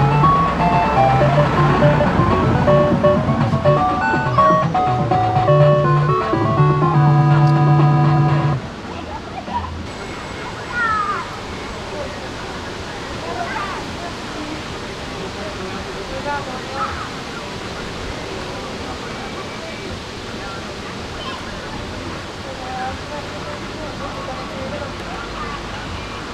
Wyspa Sobieszewska, Gdańsk, Poland - Grajace automaty
Grajace automaty rec. Rafał Kołacki